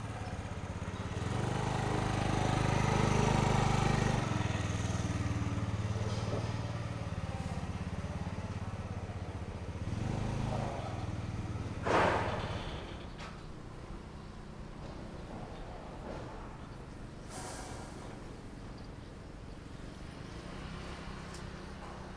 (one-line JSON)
{"title": "菜園海洋牧場遊客中心, Magong City - In front of the pier", "date": "2014-10-23 10:34:00", "description": "In the dock, Birds singing, Wind\nZoom H6+Rode NT4", "latitude": "23.55", "longitude": "119.60", "altitude": "4", "timezone": "Asia/Taipei"}